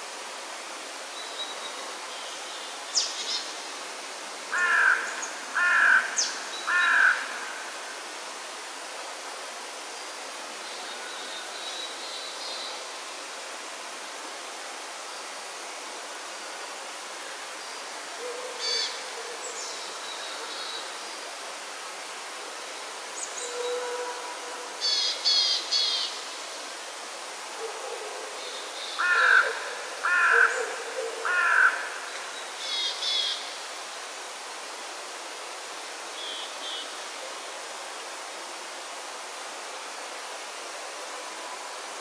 early morning sounds waking up in magdas backyard
waking up in the morning in my tent at La Pommerie. Recorded during KODAMA residency September 2009